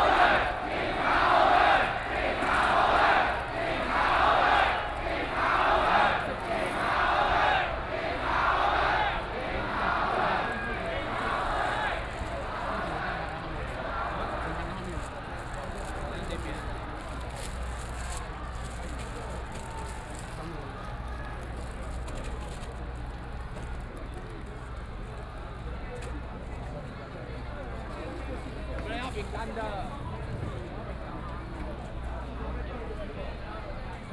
Protest, University students gathered to protest the government, Occupied Executive Yuan
Binaural recordings